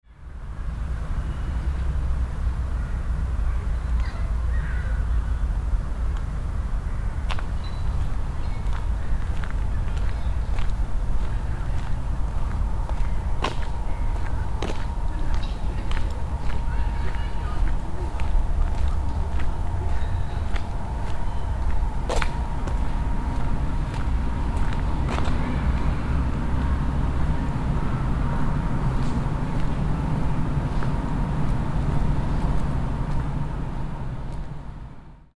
cologne, stadtgarten, weg an spielplatz
stereofeldaufnahmen im september 07 - abends
project: klang raum garten/ sound in public spaces - in & outdoor nearfield recordings